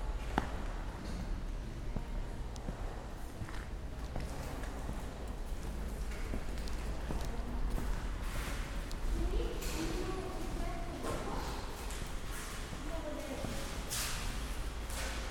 Tallinn, Kultuurikatel - soundwalking
walk in old power plant complex, now used for cultural events. parts of stalker from tarkovsky have been filmed here.